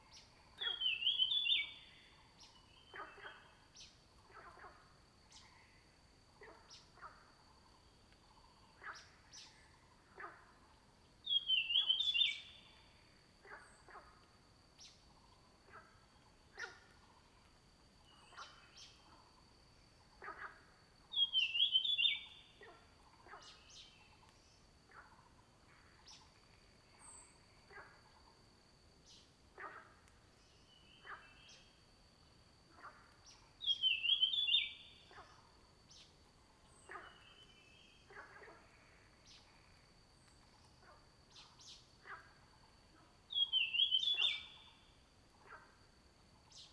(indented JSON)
{
  "title": "魚池鄉五城村三角崙, Taiwan - Bird and Frogs",
  "date": "2016-04-20 06:23:00",
  "description": "Bird sounds, Frogs chirping, Firefly habitat area\nZoom H2n MS+XY",
  "latitude": "23.93",
  "longitude": "120.90",
  "altitude": "764",
  "timezone": "Asia/Taipei"
}